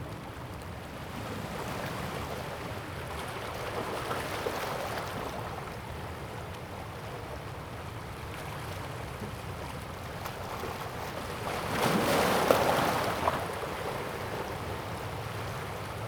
溪口, Tamsui District, New Taipei City - sound of the waves
On the coast, Sound of the waves, Aircraft sound
Zoom H2n MS+XY
21 November 2016, 16:11